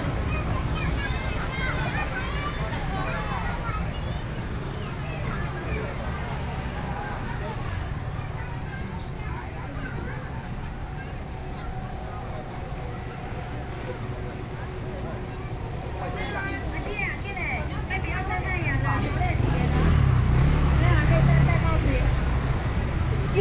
Zhongzheng St., 羅東鎮集祥里 - the traditional market
Walking through the traditional market, Traffic Sound
Sony PCM D50+ Soundman OKM II